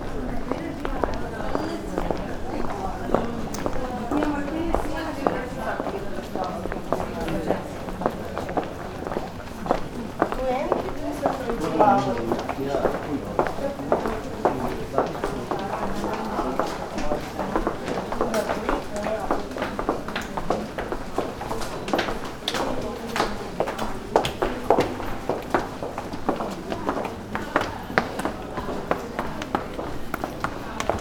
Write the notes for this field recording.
spring night, people talking, stony streets, walking ...